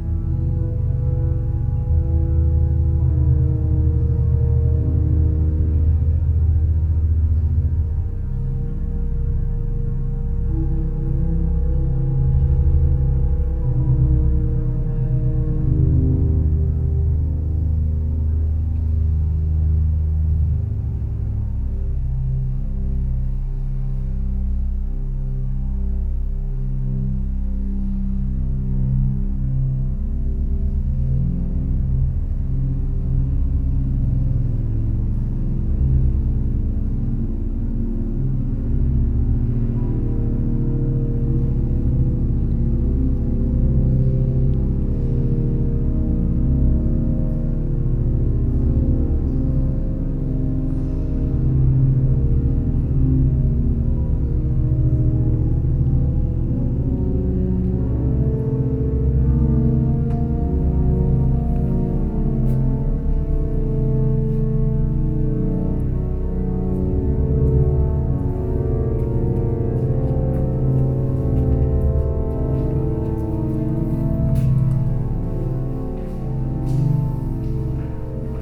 Centre Nord, Dijon, France - Cathédrale Saint-Bénigne de Dijon
zoom H4 with SP-TFB-2 binaural microphones